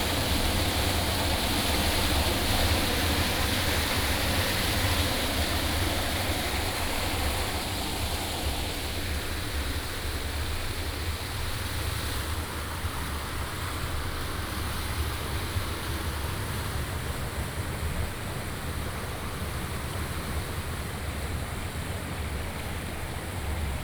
泰和橫堤路閘, Taimali Township, Taitung County - Agricultural irrigation channel
Agricultural irrigation channel, Water sound
Binaural recordings, Sony PCM D100+ Soundman OKM II